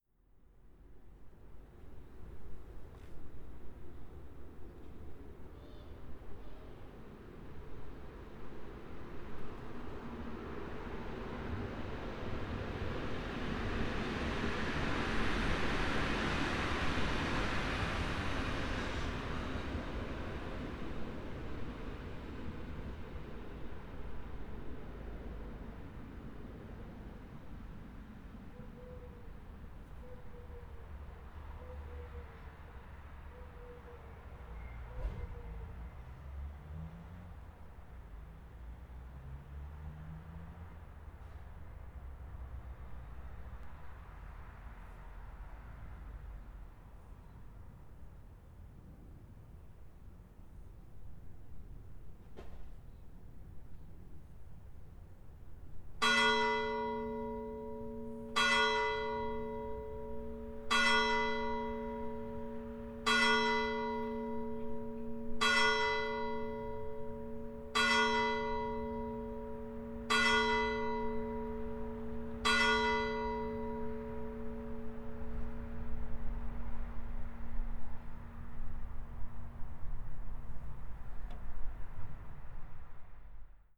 Largo Casoni, Sedico BL, Italia - San Giacomo, Bribano
Passage of the train. Turtledove song. Time signal from the bell tower of the small church of San Giacomo in Bribano (Belluno).
VEN, Italia, 29 August, 08:00